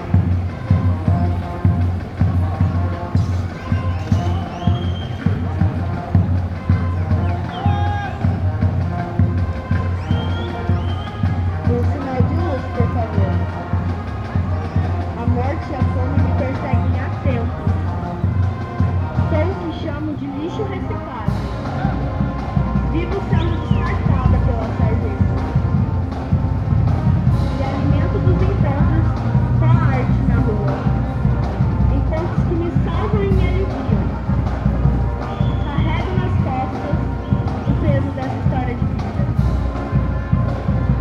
Calçadão de Londrina: Apresentação teatral e banda marcial - Apresentação teatral e banda marcial / Theatrical performance and martial band
Panorama sonoro: banda marcial com instrumentos de sopro e percussão finalizava sua apresentação no Calçadão nas proximidades da Praça Willie Davids. Nessa Praça, um grupo de estudantes de teatro apresentava uma peça utilizando microfones, músicas, violão e instrumentos de percussão. Pessoas acompanhavam tanto a apresentação da banda quanto do grupo. Os sons das duas apresentações se sobrepunham.
Sound panorama: Marching band with wind instruments and percussion finalized its presentation in the Boardwalk near the Place Willie Davids. In this Square, a group of theater students presented a play using microphones, music, guitar and percussion instruments. People followed both the band and the group presentation. The sounds of the two presentations overlapped.
Londrina - PR, Brazil, 8 July